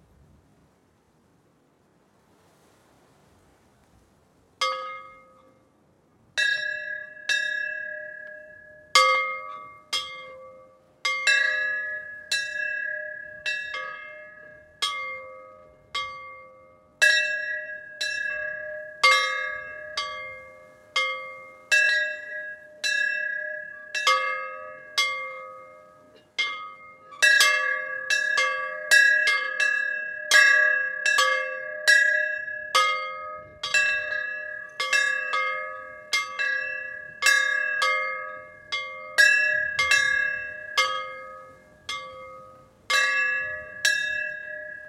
Παναγιά Σκοπιανή, Serifos, Grèce - Churchs bells